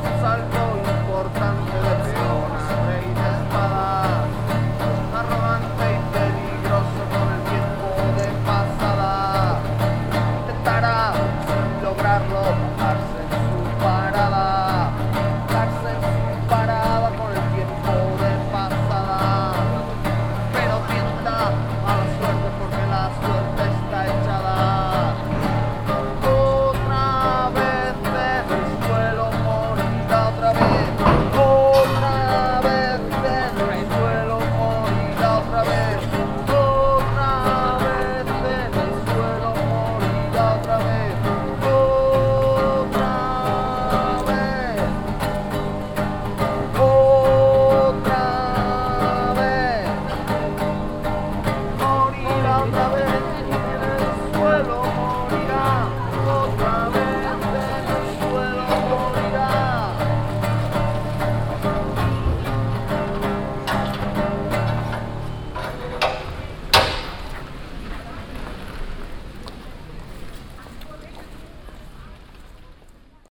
essen, kettwiger street, street musician
Sitting in the shopping zone a street musician playing guitar and starting expressively to sing.
Projekt - Klangpromenade Essen - topographic field recordings and social ambiences
Essen, Germany